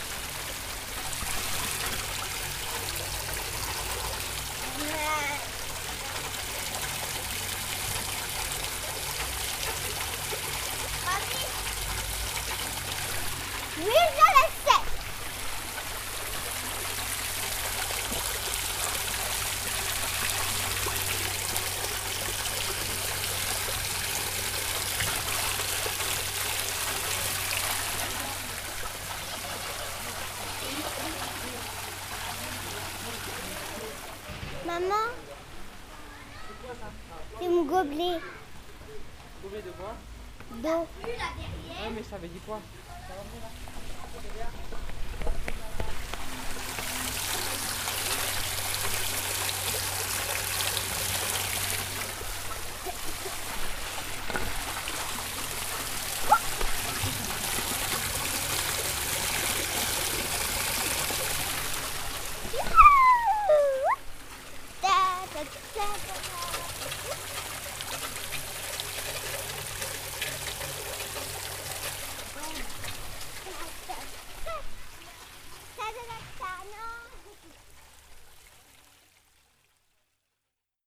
Townhall - Mairie de Schiltigheim, France - The fountain with some kids around
A fountain near by the townhall of Schiltigheim, in France.